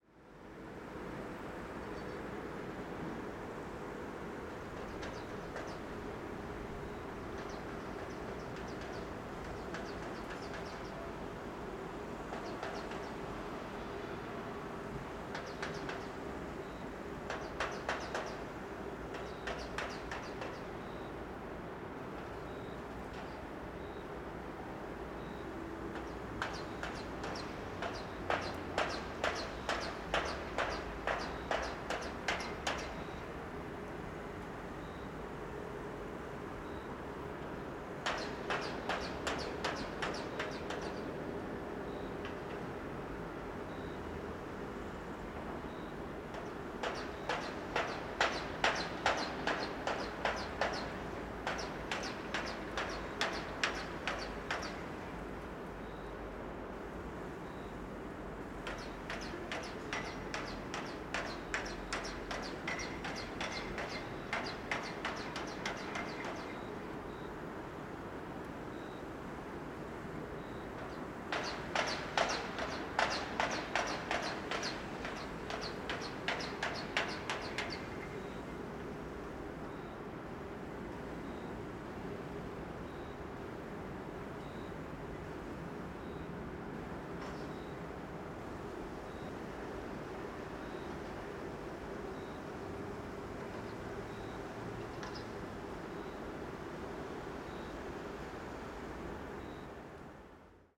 {"title": "Gasometer chirp echo, Vienna", "date": "2011-08-16 12:09:00", "description": "distinct chirp like echo off the apartment building facing the Gasometers", "latitude": "48.18", "longitude": "16.42", "altitude": "159", "timezone": "Europe/Berlin"}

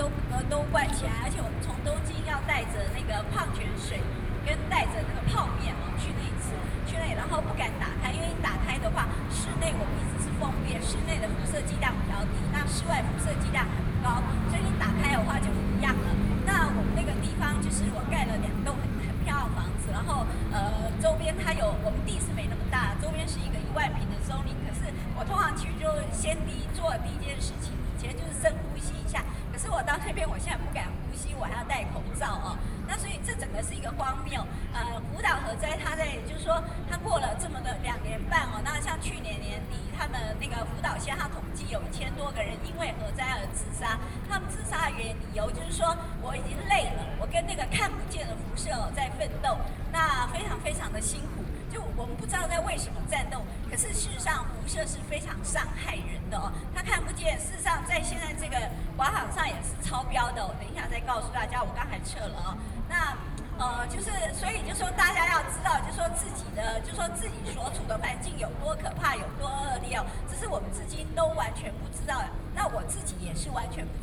{"title": "Freedom Plaza, Taipei City - Opposition to nuclear power", "date": "2013-08-09 20:25:00", "description": "Famous writer, speech, Opposition to nuclear power\nBinaural recordings", "latitude": "25.04", "longitude": "121.52", "altitude": "8", "timezone": "Asia/Taipei"}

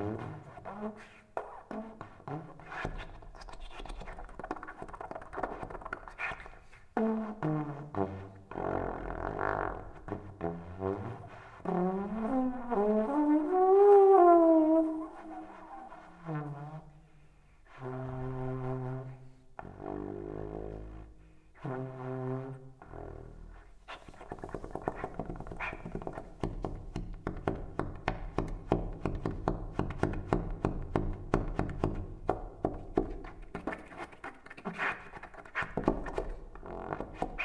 {"title": "private concert, nov 27, 2007 - Köln, private concert, nov 27, 2007", "description": "excerpt from a private concert. playing: dirk raulf, sax - thomas heberer, tp - matthias muche, trb", "latitude": "50.92", "longitude": "6.96", "altitude": "57", "timezone": "GMT+1"}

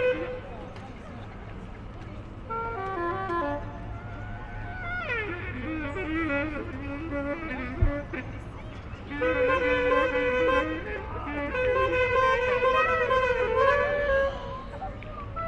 {"title": "no number, Rue Hector Berlioz, Grenoble, Francia - Audience influencing sonic materials", "date": "2020-10-17 14:30:00", "description": "Recording during Itinérances Sonores #1", "latitude": "45.19", "longitude": "5.73", "altitude": "217", "timezone": "Europe/Paris"}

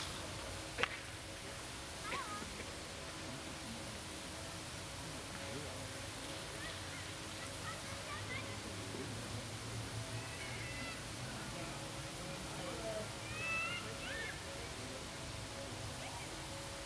{"title": "Rudolph-Wilde-Park, Berlin, Deutschland - Goldener Hirsch", "date": "2014-08-27 18:00:00", "description": "Sonne, Leute beim Bowlen, Familien & der Brunnen im Hintergrund.", "latitude": "52.48", "longitude": "13.34", "altitude": "41", "timezone": "Europe/Berlin"}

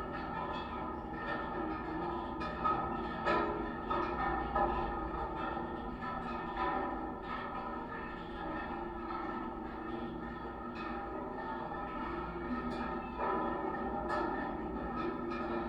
{"title": "Mindunai, Lithuania, watchtower", "date": "2015-10-17 13:35:00", "description": "highest (36 m) lithuanian public watctower heard through contact mics", "latitude": "55.22", "longitude": "25.56", "altitude": "160", "timezone": "Europe/Vilnius"}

